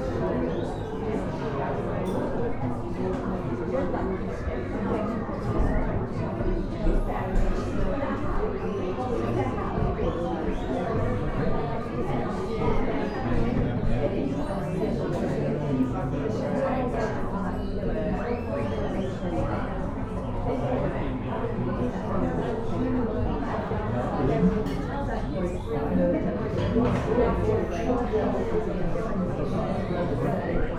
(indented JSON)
{
  "title": "Busy Lunchtime, Malvern, UK",
  "date": "2022-09-04 12:39:00",
  "description": "Happy customers in a busy cafe at lunchtime.",
  "latitude": "52.11",
  "longitude": "-2.33",
  "altitude": "156",
  "timezone": "Europe/London"
}